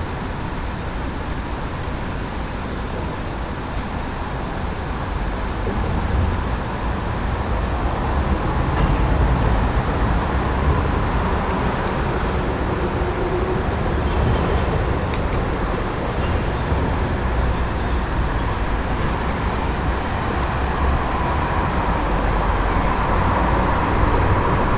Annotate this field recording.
Soundscape next to Bratislava´s Lafranconi bridge